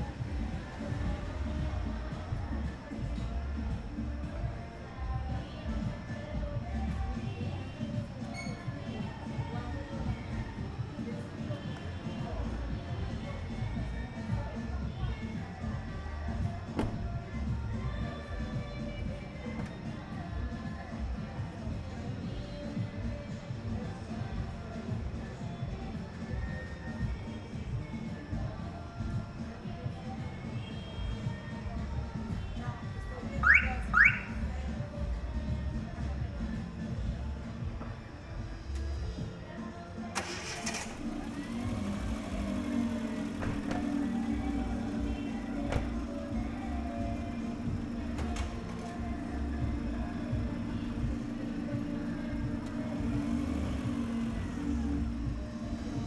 Cra. 83b ### 29a - 40, Medellín, Belén, Medellín, Antioquia, Colombia - Exterior de cancha de arena Los Alpes
Toma de audio / Paisaje sonoro grabado con la grabadora Zoom H6 y el micrófono XY a 120° de apertura en horas de la noche. Se puede percibir el sonido de la música de un bar cercano al punto de grabación, algunas personas hablando y el motor de un coche que se enciende y se pone en marcha a pocos metros del punto de grabación.
Grabador: Andrés Mauricio Escobar
Sonido tónico: Música de bar cercano
Señal Sonora: Alarma y encendido de automóvil